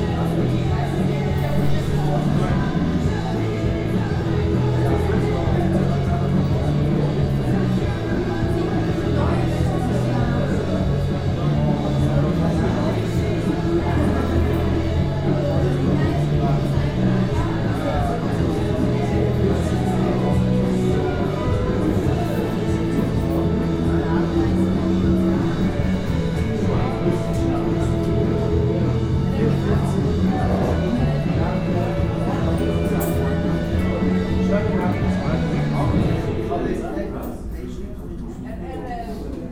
Zürich West, Schweiz - Big Ben Pub Westside
Big Ben Pub Westside, Hardstr. 234, 8005 Zürich
Zürich, Switzerland, 30 December 2014